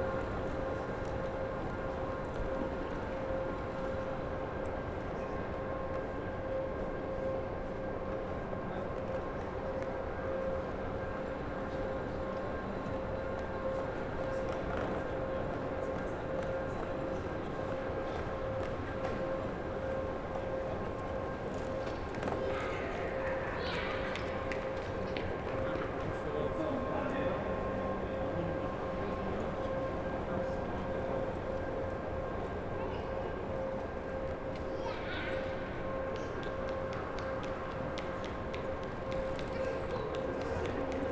Entering the large hall of the „Fernbahnhof“ at Frankfurt Airport. The echos in this space have a kind of psychodelic effect. There are not many people, the ticket counter is open but very reduced...
Hessen, Deutschland, 24 April 2020, ~17:00